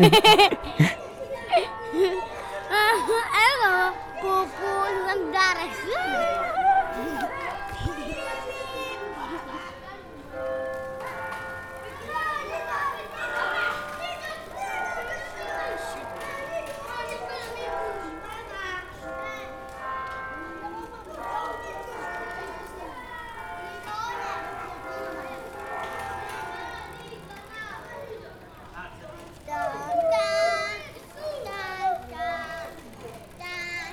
Taranto, Province of Taranto, Italy - Children curious about us, our friends and the sound recoder
Children from the old town of Taranto, playing in Largo San Martino, check at me, fabio and other people with us. They ask us what we were doing and other information, then they get curious about the recorder and start to interact.
Recorded for Taranto Sonora, a project headed by Francesco Giannico.
13 August 2010, ~12:00